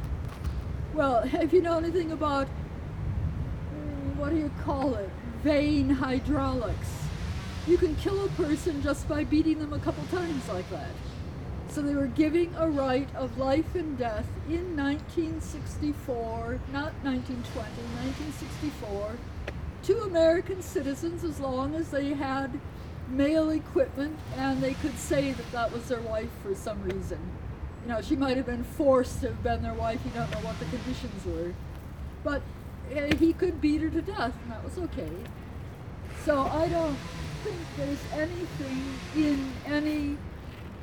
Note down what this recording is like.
(binaural), came across a woman living in a makeshift tent in the bushes of this back street. every day she was waling along the road, carrying a bunch of weeds and a stick, out of the blue talking to passer-bys about different concepts. each "listener" heard a different story. she was smoothly changing topics in a blink of an eye as if it was one story. some people were running away scared of her, some were trying to get into the conversation. you could tell she had gone off her rocker yet her words and ideas were coherent and educated although. sometimes very abstract and out of this world. here only a short excerpt.